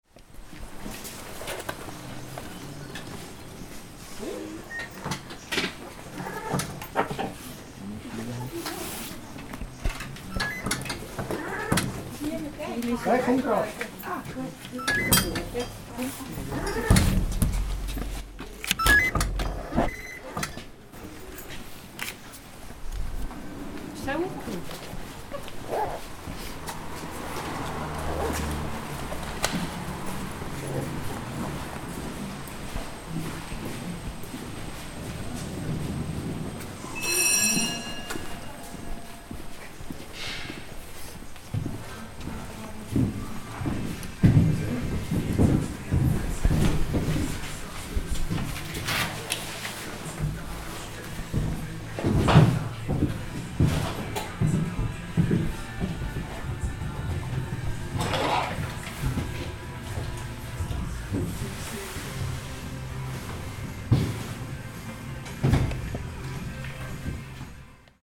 Schalterdurchgang in die Seilbahn nach der Lauchernalp
Schalterdurchgang in die Seilbahn, nur noch Wenige fahren auf die Alp, die Wintergäste sind verschwunden, im Sommer ist Ruhe und Gemuhe. Wanderer und ein Kletterer sind unterwegs